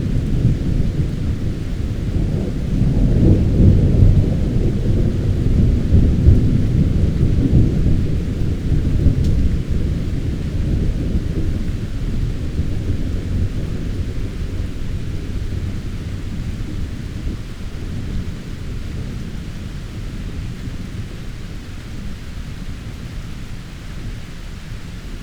Thunder over Chuncheon Lake (early August) 춘천호수 천둥(8월 시작때에)
Thunder over Chuncheon Lake (early August)_춘천호수 천둥(8월 시작때에)...recorded at the beginning of the monsoon season...this year there were continuous rains and daily thunder storms throughout August and into September...this was recorded late at night in a 8-sided pagoda on the edge of Chuncheon lake...the sounds reverberate off the surrounding hills and travel clearly over the lake...
강원도, 대한민국